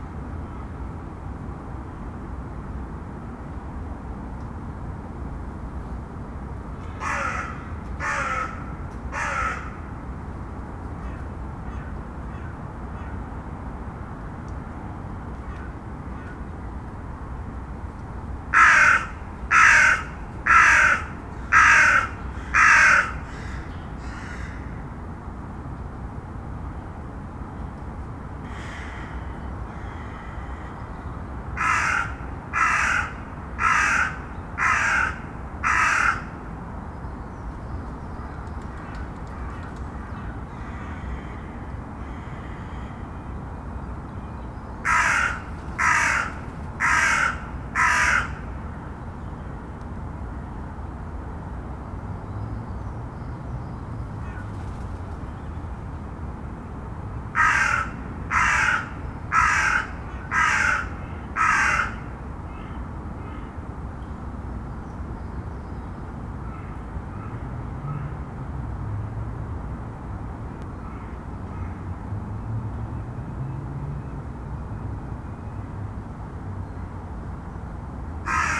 Seraing, Belgique - Abandoned factory
Recorder left alone on the top of the furnaces of this abandoned coke plant. Everything is rusted and very old. General ambiance of the plant, with distant calls from the crows.